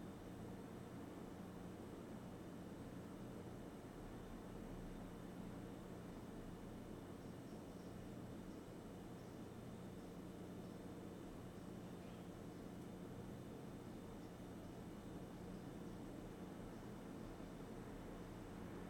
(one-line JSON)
{"title": "Norma Triangle, West Hollywood, Kalifornien, USA - Home Sound", "date": "2013-12-30 15:20:00", "description": "829, North San Vicente Boulevard, Backyard of the Apartement Compound, early afternoon. Distant City sounds, birds and A/C sound. Zoom Recorder H2n", "latitude": "34.09", "longitude": "-118.38", "altitude": "79", "timezone": "America/Los_Angeles"}